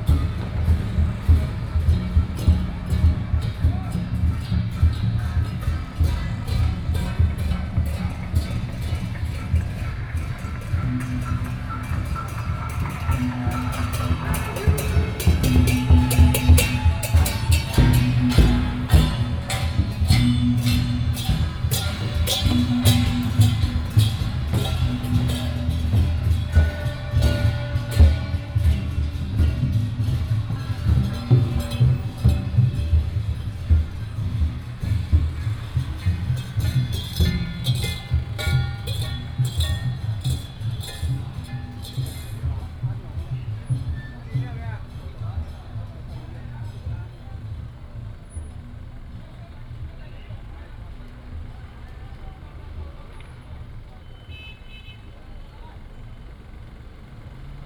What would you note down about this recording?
Temple festival festivals, Fireworks sound, traffic sound, Binaural recordings, Sony PCM D100+ Soundman OKM II